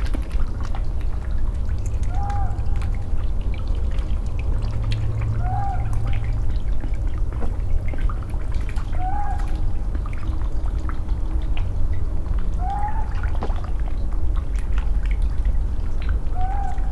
Muntjac Calls From The Hills, Malvern, Worcestershire, UK - Muntjac
A Muntjac calls from the slopes of The Malvern Hills late at night. Recorded as an overnight event from my garden. The deer was about 500 metres away above the house. Rarely seen in the area but one visited us 2 years ago and was caught on our trail cam.
MixPre 6 II. 2 x Sennheiser MKH 8020s and 2 x Beyer Lavaliers. This was an experiment with 4 tracks but only the Sennheiser tracks were used here.